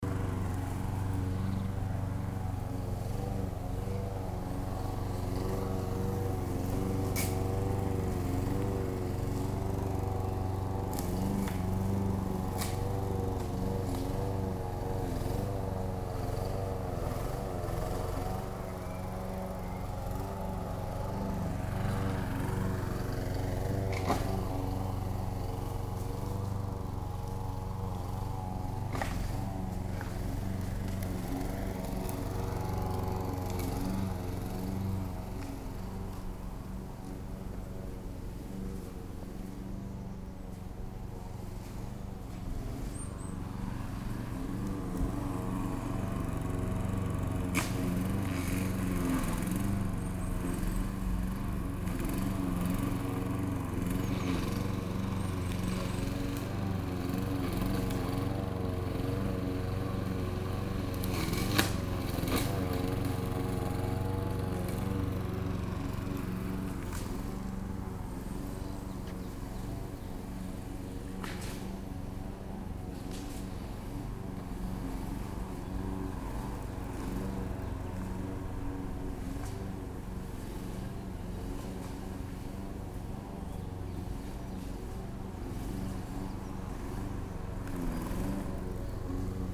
lawn-mower, ASV training areal, cologne
recorded june 4, 2008 - project: "hasenbrot - a private sound diary"
near stadium